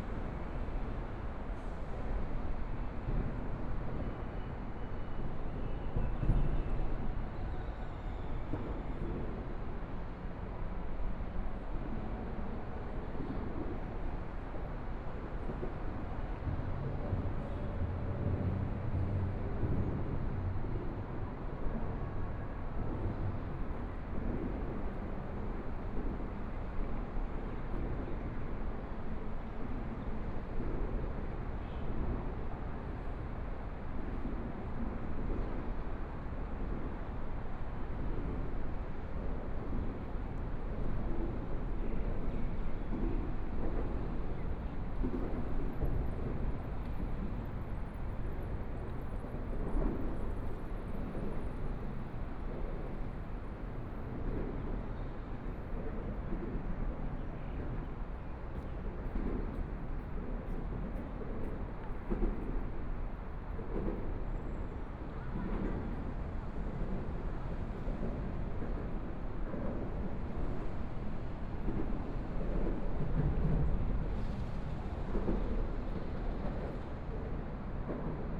中山區新庄里, Taipei City - beneath the freeway lanes
Standing beneath the freeway lanes, Sound from highway traffic, Traffic Sound, Sound from highway, Aircraft flying through, Birds singing, Binaural recordings, Zoom H4n+ Soundman OKM II